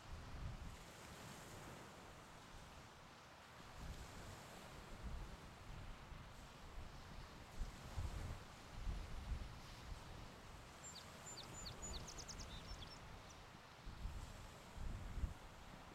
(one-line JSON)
{"title": "Chemin du Bout den Bas, La Baleine, QC, Canada - Isle-aux-Courdes, Beach, Amb", "date": "2021-07-01 09:11:00", "latitude": "47.42", "longitude": "-70.32", "altitude": "2", "timezone": "America/Toronto"}